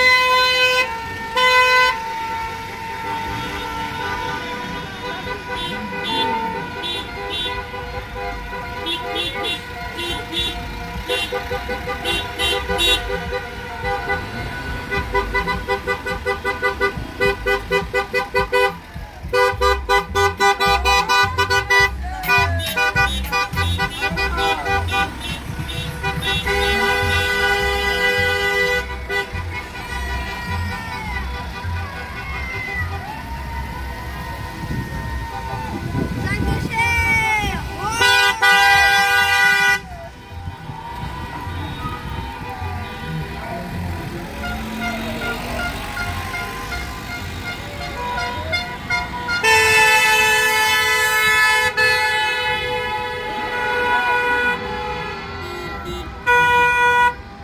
Rue de la Mare A Joncs, Réunion - 20200626 debauche-electorale CILAOS-.mare-a-jonc
20200626 vers 21h passage du cortège au bord du petit lac, CILAOS